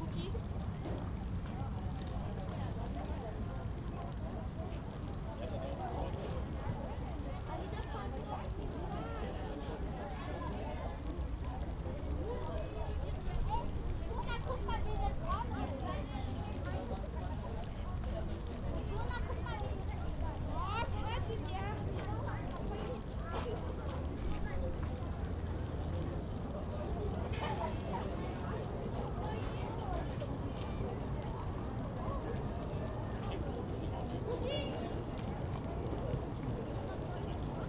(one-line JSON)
{"title": "park, venloer str. - water playground", "date": "2009-09-18 18:00:00", "latitude": "50.94", "longitude": "6.93", "altitude": "59", "timezone": "Europe/Berlin"}